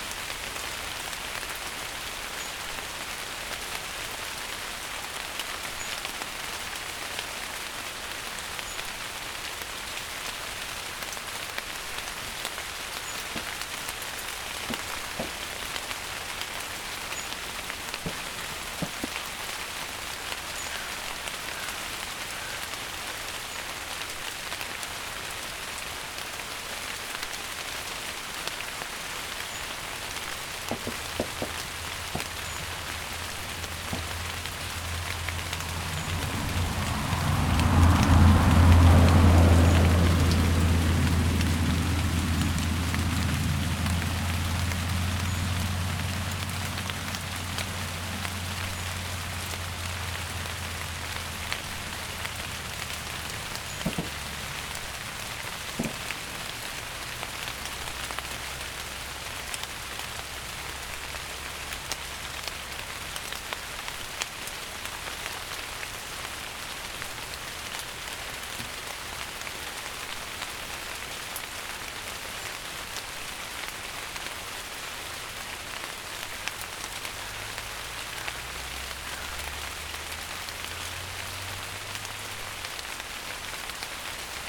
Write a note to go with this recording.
Rain on leaves early morning. Recorded with Zoom H6. Øivind Weingaarde.